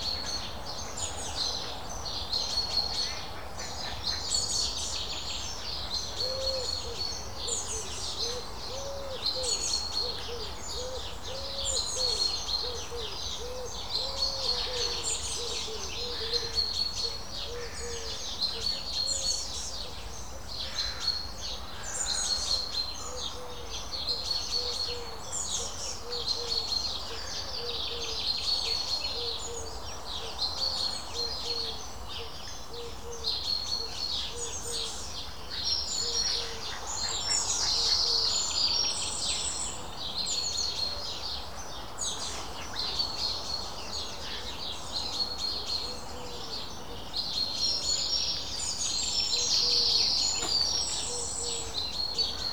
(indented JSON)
{
  "title": "River Frome, Dorchester, UK - Sounds of the riverside on a Sunday morning",
  "date": "2017-06-18 05:45:00",
  "description": "Sat on a bench at 5.45 on a Sunday morning. A dog walker passes and says something. Other sounds are wildlife and the A35 in the distance.",
  "latitude": "50.72",
  "longitude": "-2.44",
  "altitude": "59",
  "timezone": "Europe/London"
}